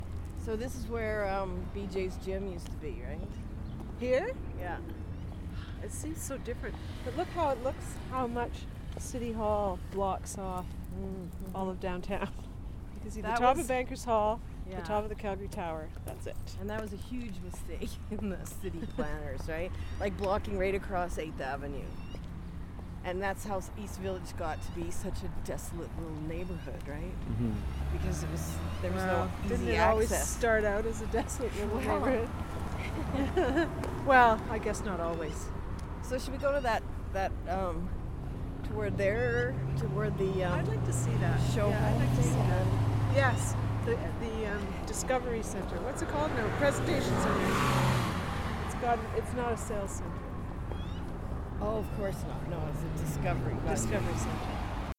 East Village, Calgary, AB, Canada - towards East Village Experience Discovery Centre
“This is my Village” explores narratives associated with sites and processes of uneven spatial development in the East Village and environs. The recorded conversations consider the historical and future potential of the site, in relation to the larger development of the East Village in the city.
April 2012